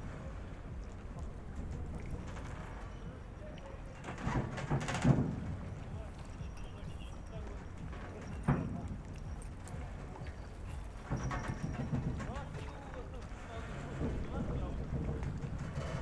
Oscillating metallic harbor for servicing boats that cross the canal to Tróia from Setúbal. Fisherman, voices, waves and radio nearby. Recorded with a Zoom H5 and XLS6 capsule.